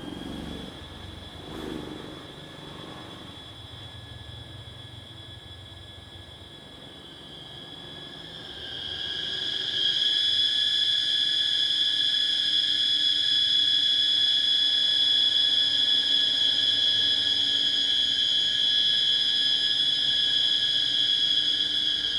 In the woods, Cicada sounds
Zoom H2n MS+XY